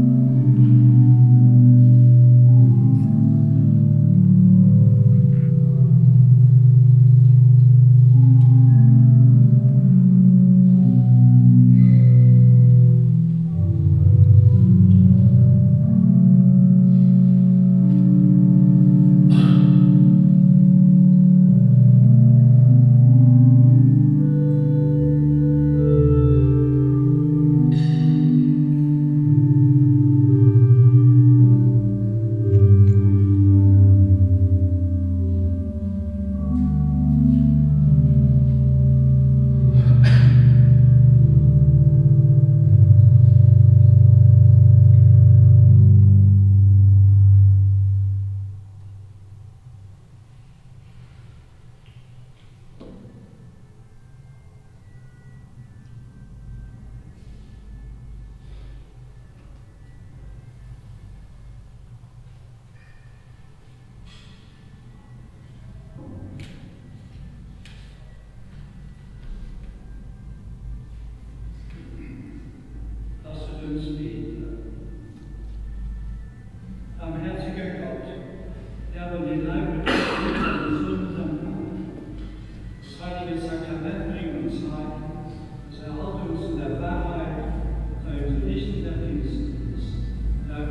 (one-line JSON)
{"title": "vianden, trinitarier church, mass", "date": "2011-08-09 20:40:00", "description": "Inside the church witnessing an evening mass. The sound of the organ and the singing of the community. Finally a short prayer by Dechant Feltes.\nVianden, Trinitarier-Kirche, Messe\nIn der Kirche während einer Abendmesse. Das Geräusch der Orgel und das Singen der Kirchengemeinschaft. Am Schluss ein kurzes Gebet vorgetragen von Dechant Feltes.\nVianden, église de la Sainte-Trinité, messe\nDans l’église pendant la messe du soir. Le bruit de l’orgue et le chant de la communauté paroissiale. Pour finir, une courte prière récitée par Dechant Feltes.\nProject - Klangraum Our - topographic field recordings, sound objects and social ambiences", "latitude": "49.93", "longitude": "6.20", "altitude": "240", "timezone": "Europe/Luxembourg"}